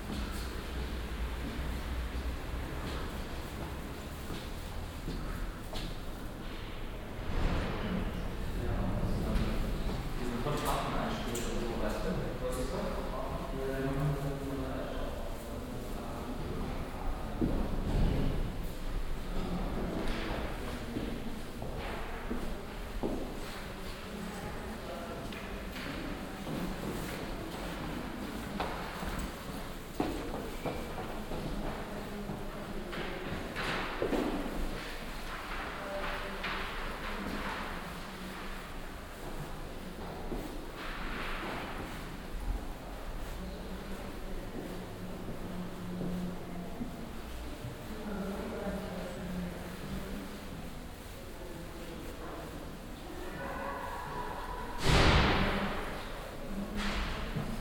flur und treppenhaus der internationalen film schule (ifs), gang bis zur vierten etage, herunterfahrt mit dem aufzug, hierbei kleine handyeinstreuung, mittags
soundmap nrw/ sound in public spaces - in & outdoor nearfield recordings
ecke kamekestrasse, ifs, 18 June 2008, 20:40